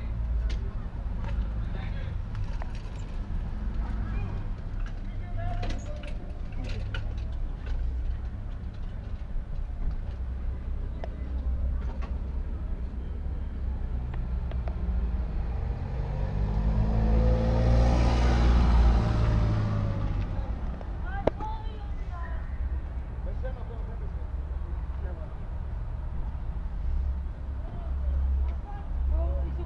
Kapetan Foufa, Ptolemaida, Greece - Parking spot
Αποκεντρωμένη Διοίκηση Ηπείρου - Δυτικής Μακεδονίας, Ελλάς, March 2022